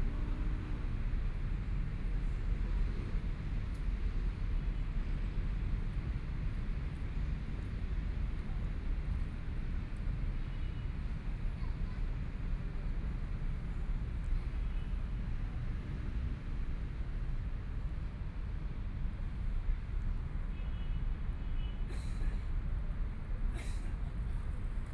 Night in the park, Traffic Sound
Please turn up the volume
Binaural recordings, Zoom H4n+ Soundman OKM II